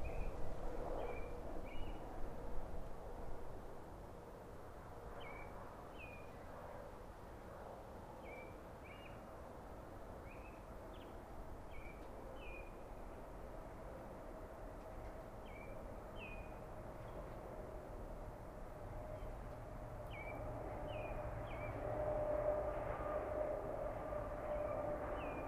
Glorieta, NM, so called USA - GLORIETA summer evening 2
more of the evening.......